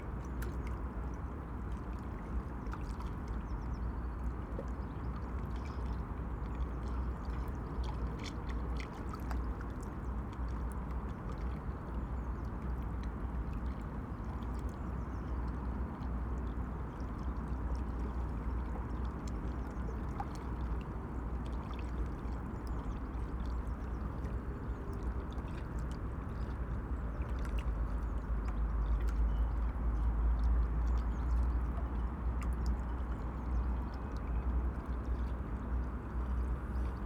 Vltava river ripples on the landing stage, U Ledáren, Praha, Czechia - Vltava ripples on the landing stage
With normal ears it is rather difficult to hear the river Vltava at Braník as traffic noise from the autobahn on the opposite bank continuously drowns out most quieter sounds including water ripples, rowing boats and kayaks. However, at some spots small waves breaking on stones at the river's edge are audible. Here the landing stage creates water eddies and gurgles that are audible. At the end the wind ruffles the microphones.